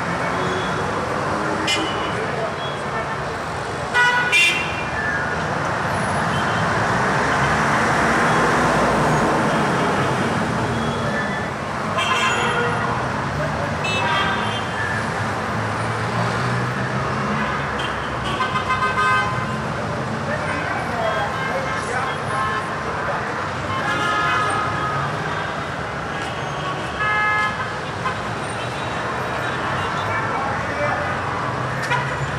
LA BAIGNOIRE DES AGITÉS/Klaxon meeting - LA BAIGNOIRE DES AGITÉS/Klaxon meeting Bab Al Faraj Aleppo, Syrie
Aleppo, Syria